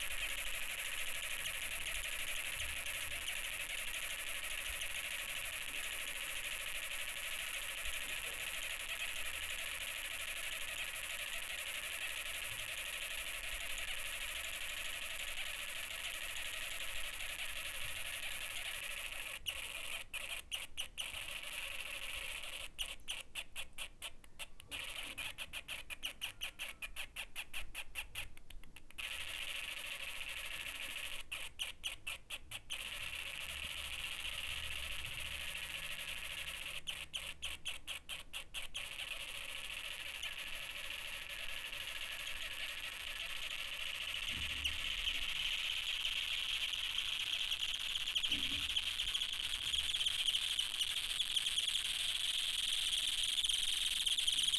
Poznan, Mateckiego Street, bathroom - attached plunger
a drain plunger sucked to the shower tray, removing it very slowly